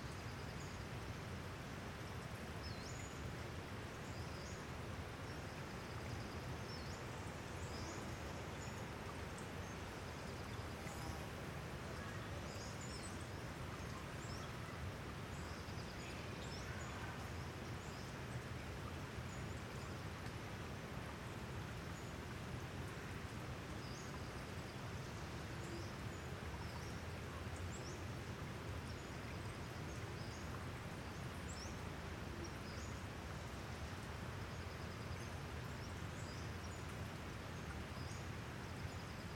Miur Wood hikers 2, California
morning hikers in Miur Wood
Marin, California, United States of America